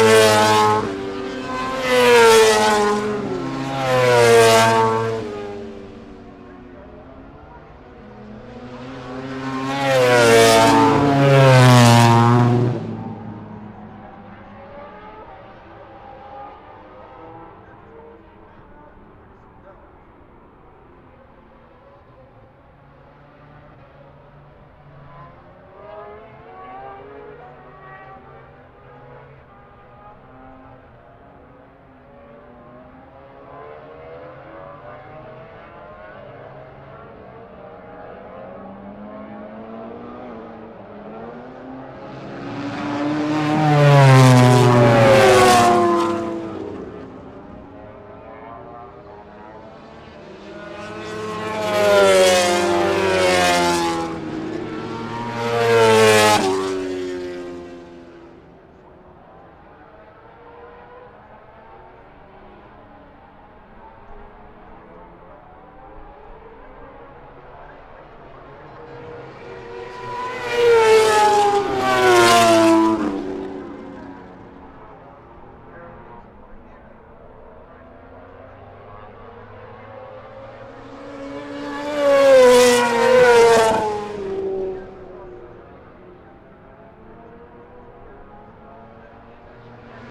Unnamed Road, Derby, UK - British Motorcycle Grand Prix 2004 ... moto grandprix ...
British Motorcycle Grand Prix 2004 ... Qualifying part two ... one point stereo to minidisk ...